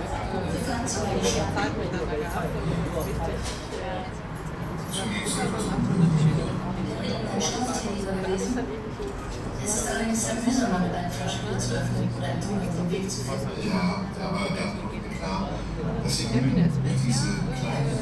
ringo - public viewing: tatort
at sunday evenings, millions of germans are watching Tatort, a very popular crime thriller. more and more it becomes a public event, to go to your favorite pub or club to watch TV.